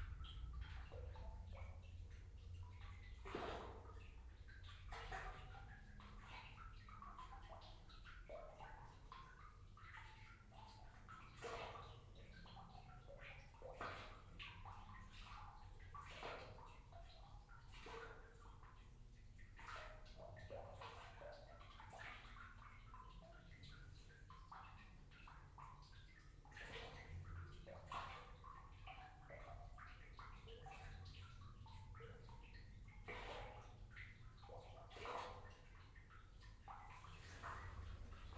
Meyersche Stollen, Aarau, Schweiz - Water with train in Meyersche Stollen
Third recording of the water, this time the trains of the main station above this old tunnel are audible (binaural).
Aarau, Switzerland